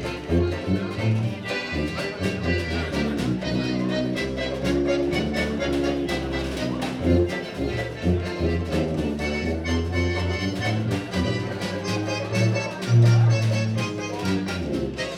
neoscenes: folk band at the Artisan